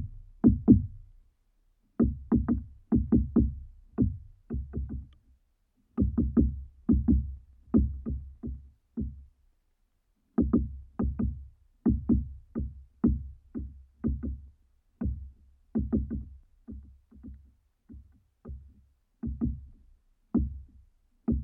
{"title": "Utena, Lithuania, woodpecker", "date": "2021-02-05 16:10:00", "description": "dead pine tree. woodpecker on the top and a pair of contact mics below", "latitude": "55.52", "longitude": "25.60", "altitude": "115", "timezone": "Europe/Vilnius"}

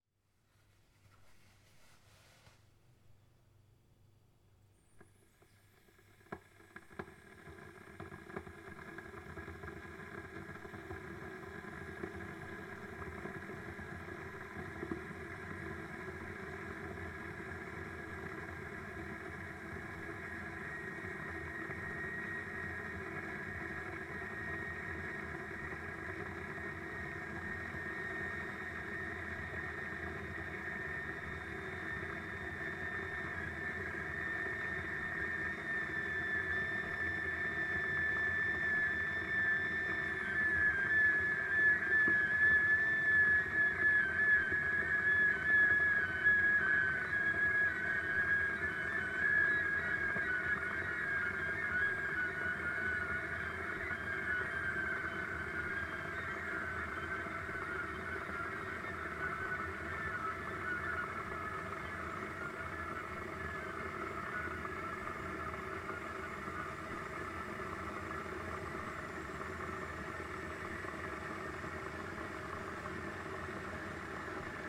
{"title": "Pégairolles-de-l'Escalette, France - bouilloire", "date": "2013-08-23 08:40:00", "description": "henry café matin chauffer eau siffle", "latitude": "43.80", "longitude": "3.32", "altitude": "312", "timezone": "Europe/Paris"}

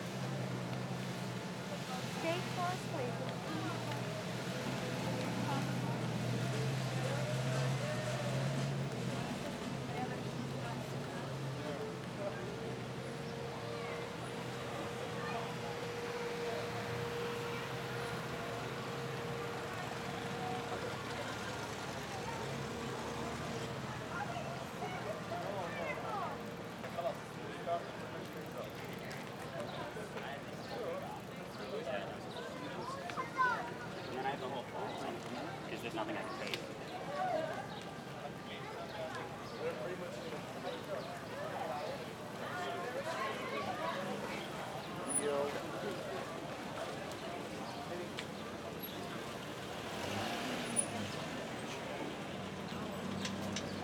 {"title": "Provincetown, Ma, Commercial St., July 4th Weekend", "date": "2010-07-03 16:30:00", "description": "Provincetown, Cape Cod, Commercial St.", "latitude": "42.05", "longitude": "-70.19", "altitude": "4", "timezone": "America/New_York"}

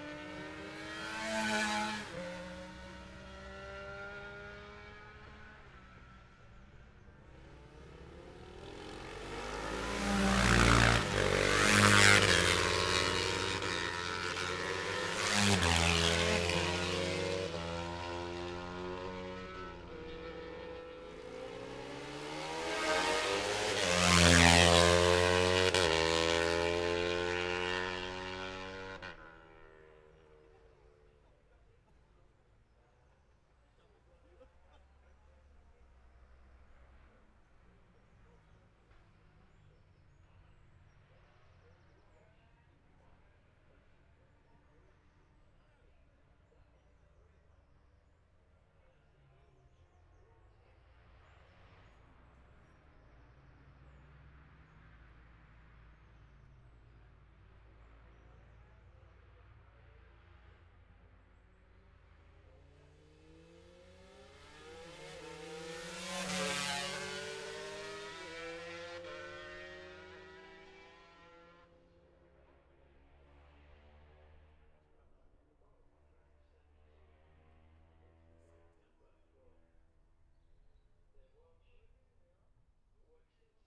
{"title": "Jacksons Ln, Scarborough, UK - olivers mount road racing ... 2021 ...", "date": "2021-05-22 10:05:00", "description": "bob smith spring cup ... ultra-light weights practice... dpa 4060s to MIxPre3 ...", "latitude": "54.27", "longitude": "-0.41", "altitude": "144", "timezone": "Europe/London"}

